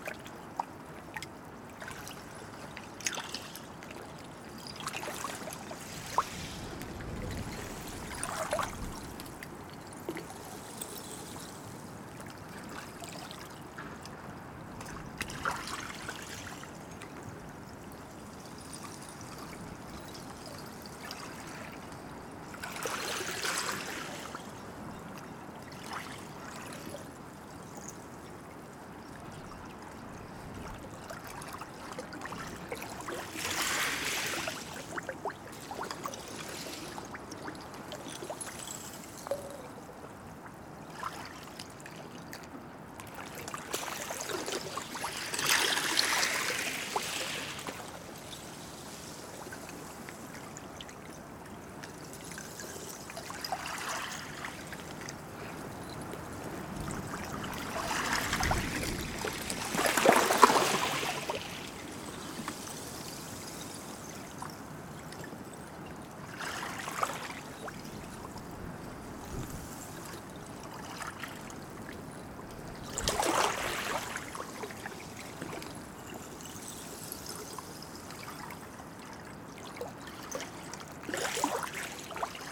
Ploumanach, Port, France - Waves carryings a bunch of Sea Shells
Dans un coin du port, des petites vagues ont ramassés des coquillages.
Waves carryings a bunch of Sea Shells in an enclosure of the port.
/Oktava mk012 ORTF & SD mixpre & Zoom h4n